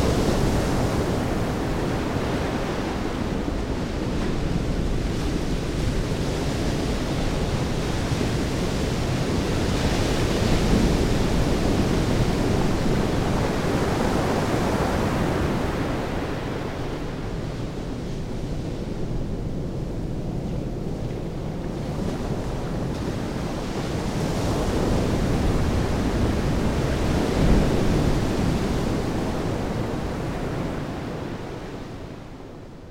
lagos, beach, atlantic ocean
the stormy waves of the atlantic ocean reach the stony beach, recorded in the early evening
soundmap international - social ambiences, topographic field recordings